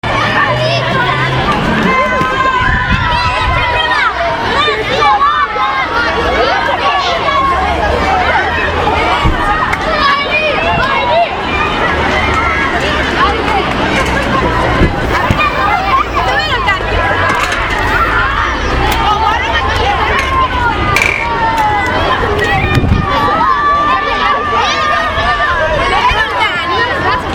via Gramsci, Parabiago (Milan), ragazzi alluscita da scuola
Ragazzi alluscita dalle scuole medie, ultimo giorno di scuola